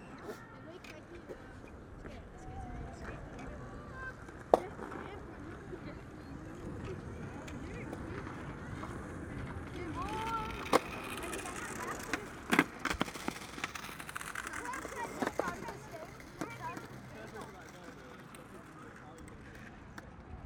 København, Denmark - Skateboarders
On a big hill streaked with curved lines (it's superb), skateboarders playing during a sunny afternoon.
16 April 2019, 15:20